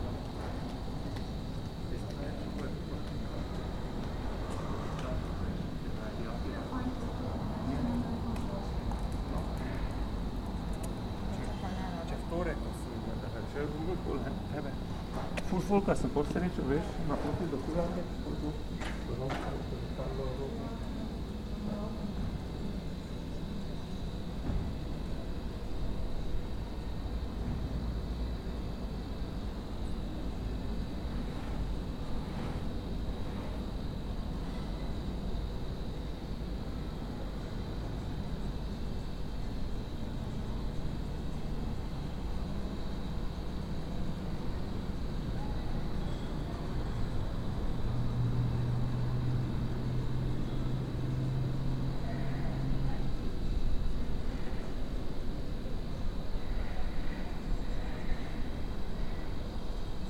Grajska ulica, Maribor, Slovenia - corners for one minute
one minute for this corner: Grajska ulica 5